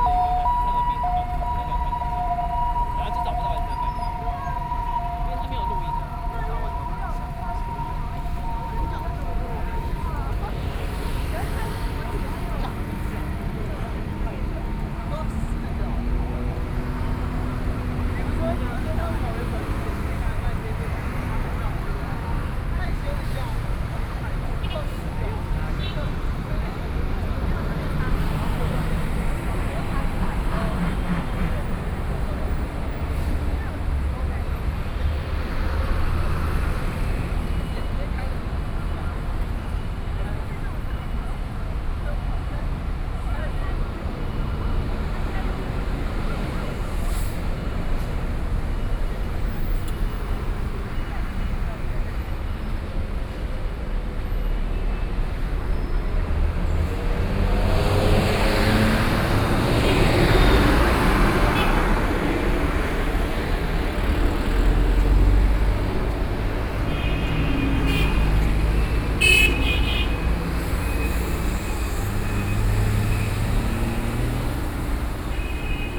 Zhongxiao W. Rd., Taipei - walking in the Street
From Control Yuan to Taipei Station, Binaural recordings, Sony PCM D50 + Soundman OKM II